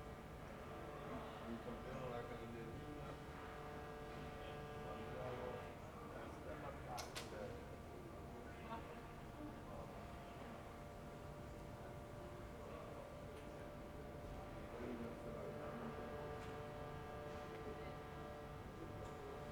Ascolto il tuo cuore, città, I listen to your heart, city. Several chapters **SCROLL DOWN FOR ALL RECORDINGS** - Night with Shruti box in background in the time of COVID19 Soundscape
"Night with Shruti box in background in the time of COVID19" Soundscape
Chapter LXXIV of Ascolto il tuo cuore, città. I listen to your heart, city
Tuesday May 12th 2020. Fixed position on an internal terrace at San Salvario district Turin, fifty two days after emergency disposition due to the epidemic of COVID19.
Start at 10:43 p.m. end at 11:07 p.m. duration of recording 23’52”
Piemonte, Italia, 12 May, ~23:00